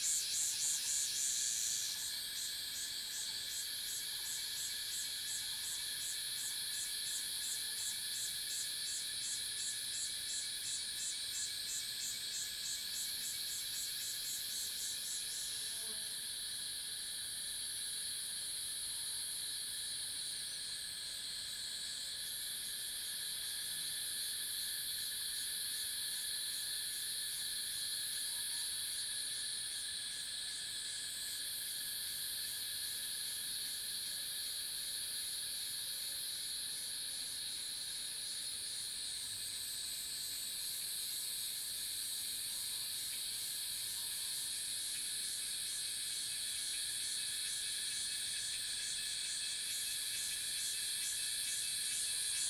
油茶園, 魚池鄉五城村, Nantou County - Cicada and Bird sounds
Cicada sounds, Bird sounds, In the morning
Zoom H2n MS+XY
Yuchi Township, 華龍巷43號, 8 June 2016